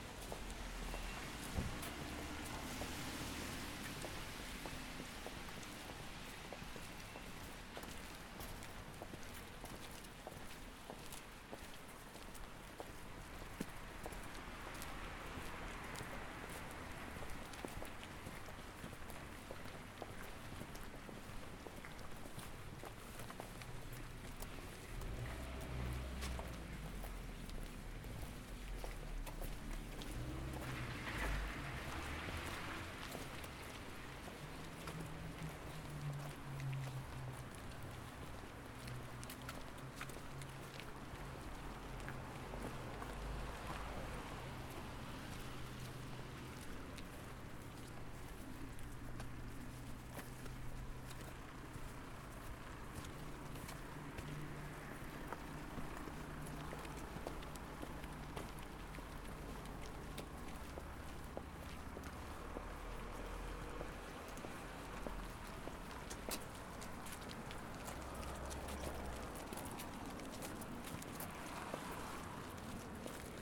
Kalamaja park (former cemetery) - A sonic walk and deep listening to Kalamaja 1 (from Kai Center)
Recorded with a Zoom H4N Pro, pointed at the ground while walking together with 17 other people
A sonic walk and deep listening to Kalamaja - organised by Kai Center & Photomonth, Tallinn on the 3rd of November 2019.
Elin Már Øyen Vister in collaboration with guests Ene Lukka, Evelin Reimand and Kadi Uibo.
How can we know who we are if we don't know who we were?... History is not the story of strangers, aliens from another realm; it is the story of us had we been born a little earlier." - Stephen Fry